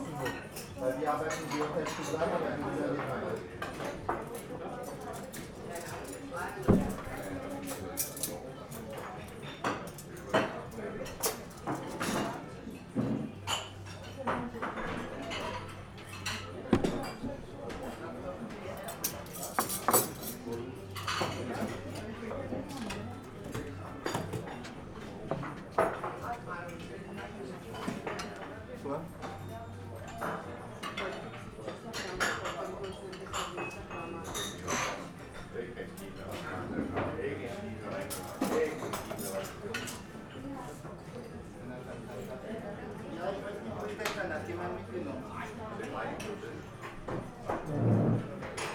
{"title": "Alte City Pension, Rankestraße - hotel restaurant", "date": "2012-12-09 09:09:00", "description": "very busy restaurant on Sunday morning. hectic manager wrestling his way through the hungry crowd with the plates, apologizing for not making enough of coffee.", "latitude": "52.50", "longitude": "13.33", "altitude": "45", "timezone": "Europe/Berlin"}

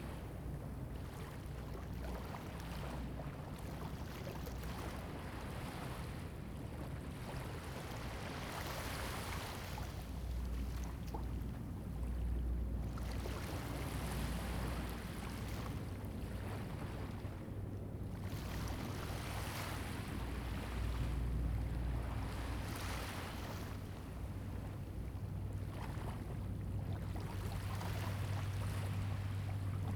{"title": "龍門村, Huxi Township - At the beach", "date": "2014-10-21 10:28:00", "description": "At the beach, Sound of the waves\nZoom H2n MS +XY", "latitude": "23.56", "longitude": "119.68", "altitude": "4", "timezone": "Asia/Taipei"}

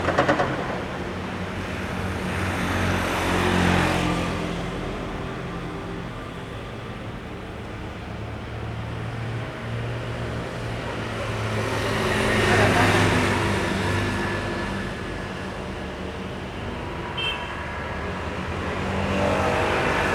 {"title": "Da'an District, Taipei - Construction, traffic noise", "date": "2012-02-06 11:21:00", "description": "Construction, traffic noise, Sony ECM-MS907, Sony Hi-MD MZ-RH1", "latitude": "25.01", "longitude": "121.55", "altitude": "14", "timezone": "Asia/Taipei"}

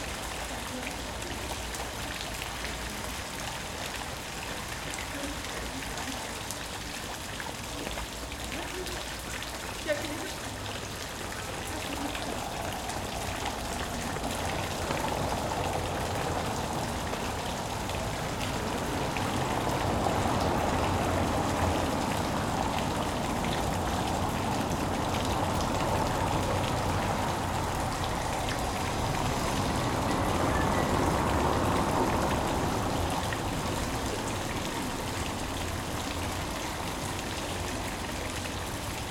{"title": "Artesian well - Artesian Well", "date": "2012-07-12 12:26:00", "description": "A artesian Well in the Heart of Ulm. Recorded with a tascam dr680 und a nt4", "latitude": "48.40", "longitude": "10.00", "altitude": "475", "timezone": "Europe/Berlin"}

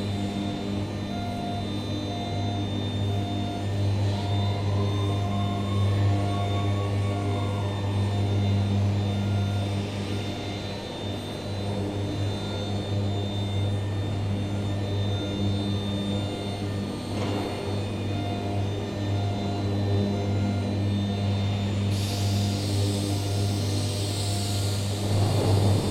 {"title": "Charleroi, Belgium - Industrial soundscape", "date": "2018-08-11 11:40:00", "description": "Industrial soundscape near the Thy-Marcinelle wire drawing factory.\n0:26 - Electric arc furnace reduce the scrap to cast iron.\n12:19 - Pure oxygen is injected in the Bessemer converter, it's a treatment of molten metal sulfides to produce steel and slag.\n19:58 - Unloading the scrap of the ELAN from LEMMER (nl) IMO 244620898.\n28:04 - Again the electric furnace.\n47:33 - Again the Bessemer converter.\nGood luck for the listening. Only one reassuring word : there's no neighborhood.", "latitude": "50.41", "longitude": "4.43", "altitude": "101", "timezone": "GMT+1"}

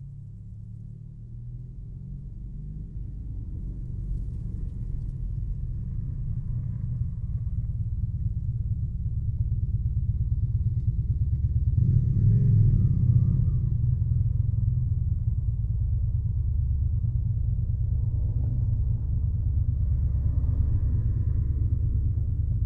{"title": "Ginučiai, Lithuania, land reclamation tube - land reclamation tube", "date": "2015-07-19 14:10:00", "description": "small microphones placed in the land reclamation tube", "latitude": "55.39", "longitude": "26.00", "altitude": "149", "timezone": "Europe/Vilnius"}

